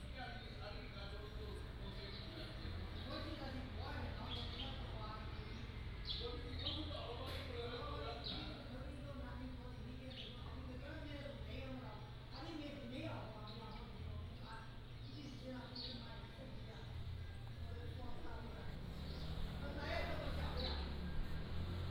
馬祖村, Nangan Township - Small village streets
Small village streets, Small village mall, Birds singing
福建省, Mainland - Taiwan Border, 15 October 2014, 09:04